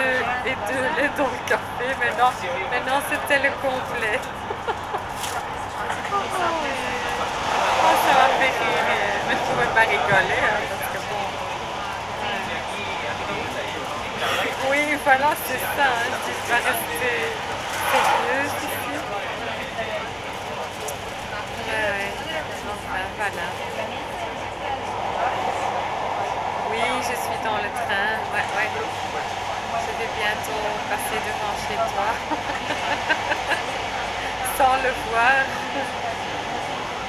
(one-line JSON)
{"date": "2008-08-27 15:49:00", "description": "Near Schaerbeek, telephone conversation in the train", "latitude": "50.89", "longitude": "4.41", "altitude": "24", "timezone": "Europe/Brussels"}